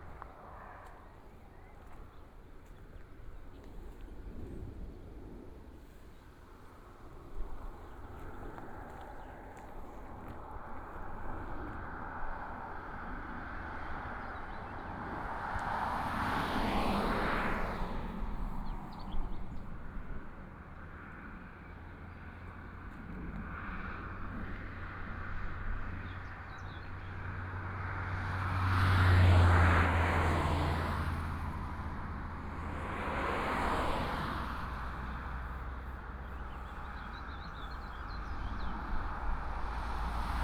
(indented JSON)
{
  "title": "Petershauser Straße, Hohenkammer - At the roadside",
  "date": "2014-05-11 17:07:00",
  "description": "At the roadside, Birdsong, Traffic Sound",
  "latitude": "48.42",
  "longitude": "11.51",
  "altitude": "466",
  "timezone": "Europe/Berlin"
}